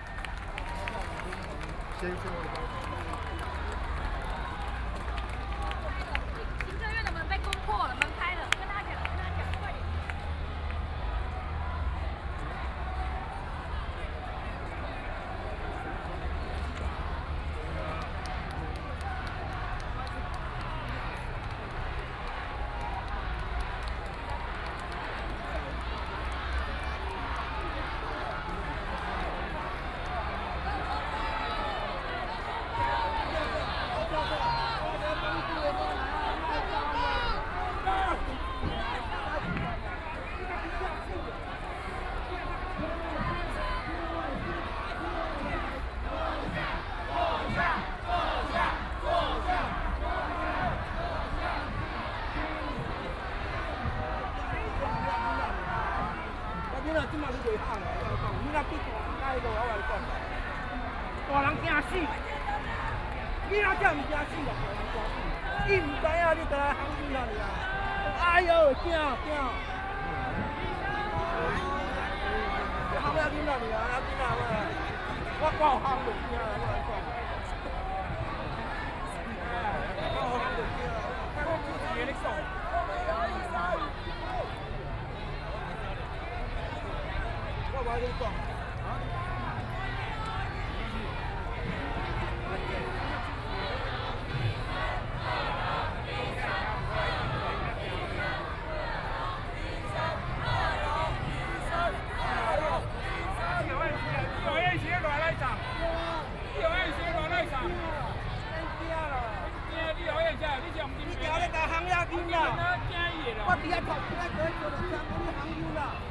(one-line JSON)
{"title": "行政院, Taiwan - occupied the Executive Yuan", "date": "2014-03-23 21:08:00", "description": "University students occupied the Executive Yuan\nBinaural recordings", "latitude": "25.05", "longitude": "121.52", "altitude": "10", "timezone": "Asia/Taipei"}